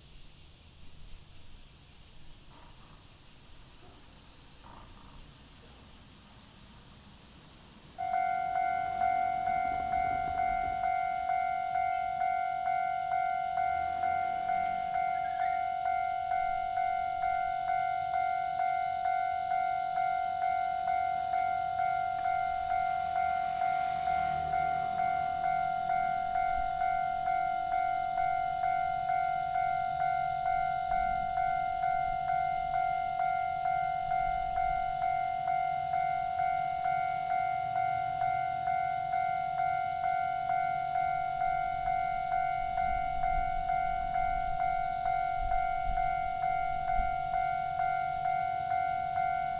新光路, Pingzhen Dist. - in the railroad crossing
in the railroad crossing, Cicada cry, Traffic sound, The train runs through
Zoom H6 XY